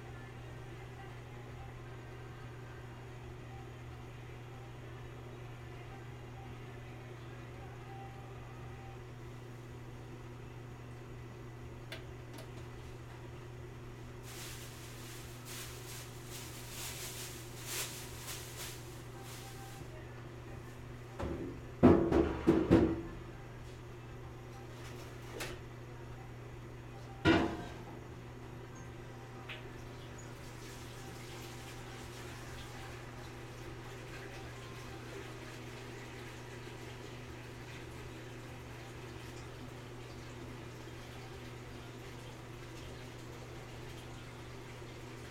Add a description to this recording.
Ambient sounds from a Japanese restaurant kitchen in Midtown.